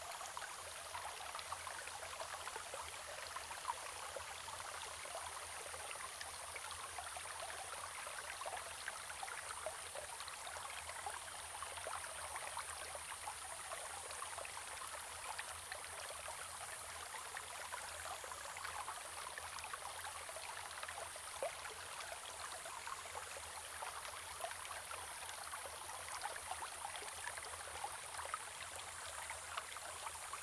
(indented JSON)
{
  "title": "種瓜坑溪, 埔里鎮成功里, Taiwan - Small streams",
  "date": "2016-07-14 10:01:00",
  "description": "Small streams\nZoom H2n Saprial audio",
  "latitude": "23.96",
  "longitude": "120.89",
  "altitude": "454",
  "timezone": "Asia/Taipei"
}